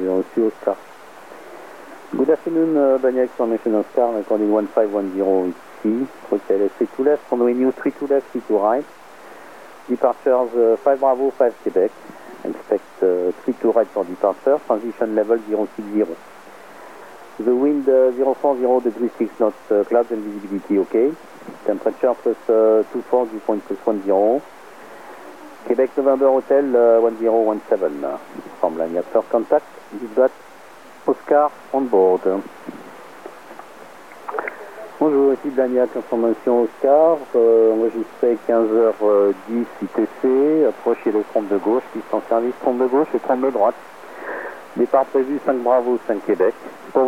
Avenue Camille Flammarion, Toulouse, France - radio wave
astronomical observatory
radio wave scanner, Blagnac airport track
Captation : Uniden UBC 180 XLT / Diamond RH795 / Zoom H4n